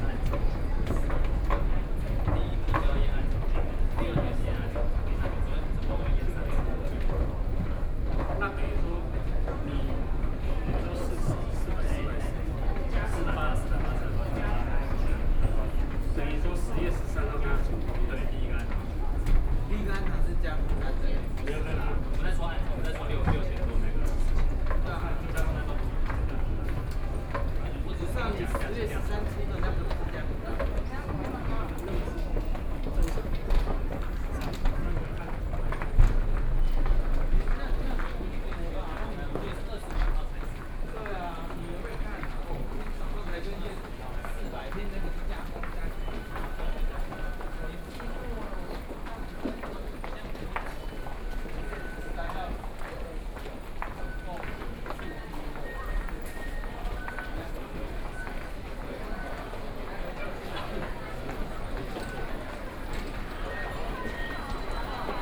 Zhongxiao Fuxing Station, Taipei - soundwalk
From the station on the ground floor, Then through the department store, Into the station's underground floors, Sony PCM D50 + Soundman OKM II